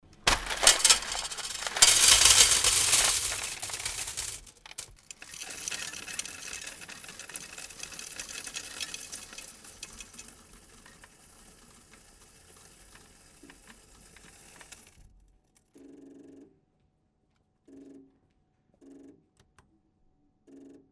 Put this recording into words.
geldzählmaschine, direktmikrophonierung, Vorgang 01, soundmap nrw - sound in public spaces - in & outdoor nearfield recordings